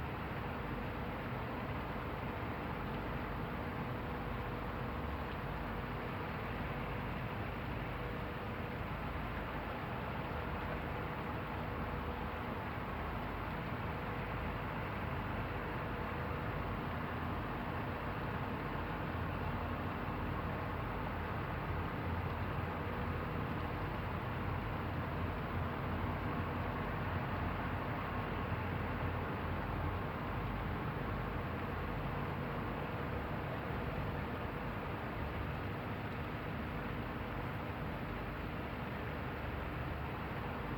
Barragem Picote, Portugal - Barragem do Picote, Portugal
Barragem do Picote, Portugal. Mapa Sonoro do rio Douro. Picote power plant. Douro River Sound Map.